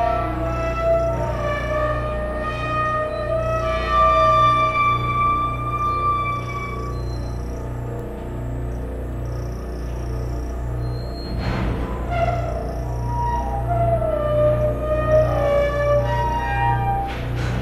Hinookaissaikyōdanichō, Yamashina Ward, Kyoto, Japon - Kyoto Keage Hydroelectric Power Station
Kyoto (Japon)
Keage Hydroelectric Power Station